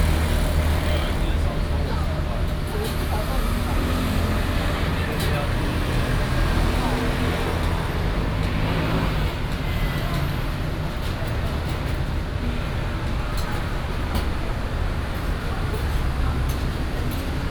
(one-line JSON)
{"title": "Sec., Zhongshan N. Rd., Tamsui Dist. - Fried Chicken", "date": "2016-04-01 18:59:00", "description": "Fried Chicken, Traffic Sound", "latitude": "25.17", "longitude": "121.44", "altitude": "48", "timezone": "Asia/Taipei"}